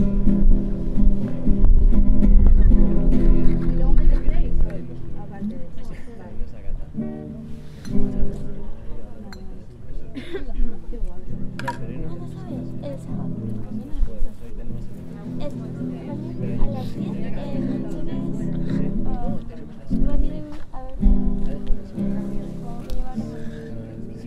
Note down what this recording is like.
Friday, december 11th... musical environment in the field of the faculty of fine arts.